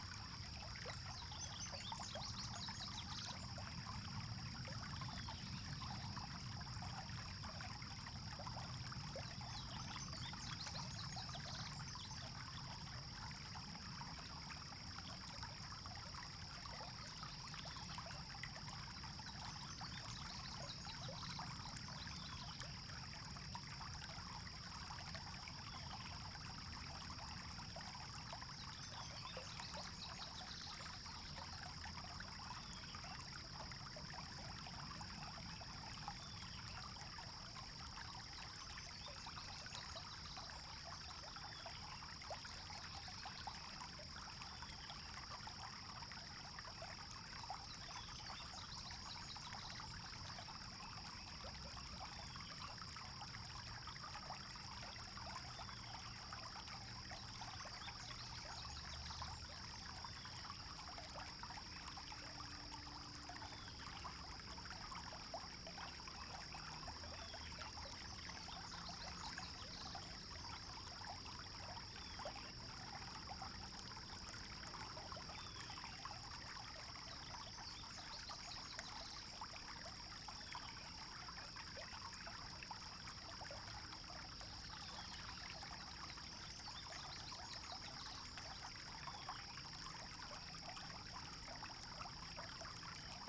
Indian Camp Creek, Foristell, Missouri, USA - Indian Camp Creek
Recording from the bank of Indian Camp Creek.